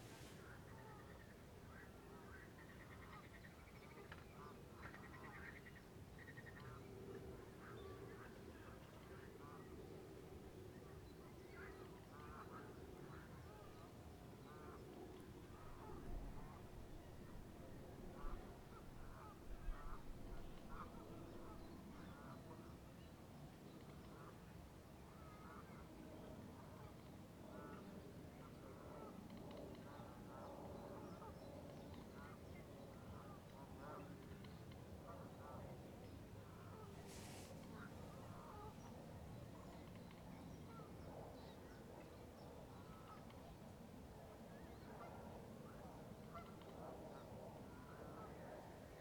La Courneuve, France - Espaces Calmes - Parc Départemental Georges-Valbon